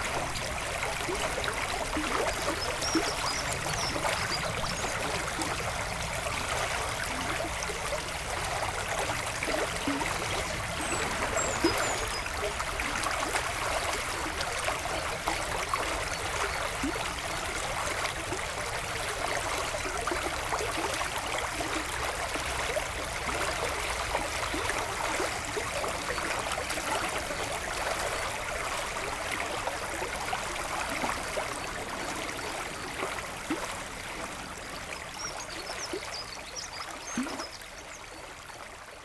Oud-Heverlee, Belgium - The Nethen river
The Nethen river flowing quietly in a small and bucolic landscape. The funny fact is that this recording is made on the linguistic border, north speaking dutch, south speaking french.
29 March